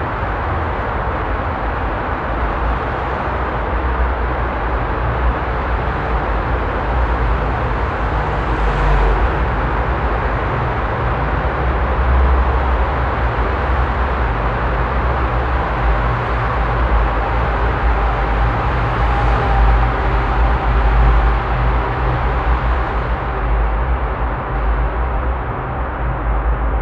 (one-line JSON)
{"title": "Schlossufer, Düsseldorf, Deutschland - Düsseldorf, Rheinufertunnel", "date": "2012-11-19 13:45:00", "description": "Inside the Rheinufertunnel, an underearth traffic tunnel. The sound of the passing by traffic reverbing in the tunnel tube.\nThis recording is part of the exhibition project - sonic states\nsoundmap nrw - topographic field recordings, social ambiences and art placess", "latitude": "51.23", "longitude": "6.77", "altitude": "33", "timezone": "Europe/Berlin"}